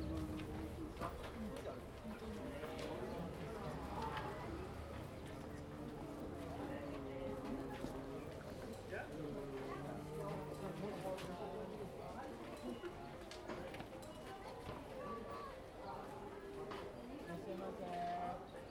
Katasekaigan, Fujisawa-shi, Kanagawa-ken, Japonia - Enoshima station
A sunny sunday next to the Enoshima train station, a beloved weekend refuge for all of Tokyo.
Fujisawa-shi, Kanagawa-ken, Japan, 15 March 2015, 13:01